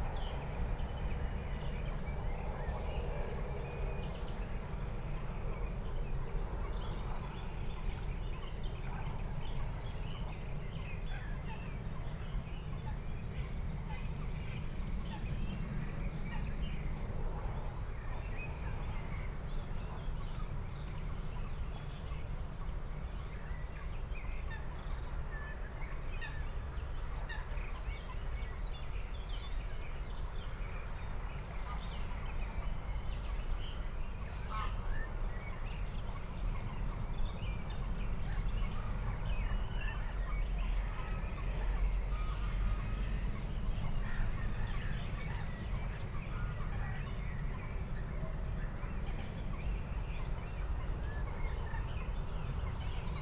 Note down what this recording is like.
ambience at Moorlinse pond, place revisited on a warm spring evening, (Sony PCM D50, DPA 4060)